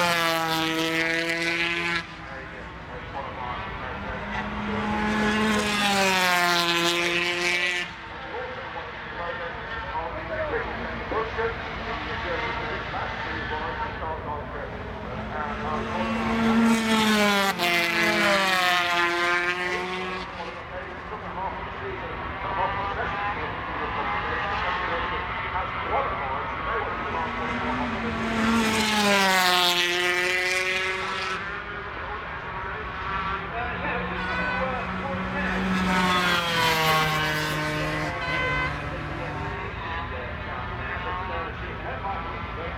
250cc warm up ... Starkeys ... Donington Park ... warm up and associated sounds ... Sony ECM 959 one point stereo mic to Sony Minidisk ...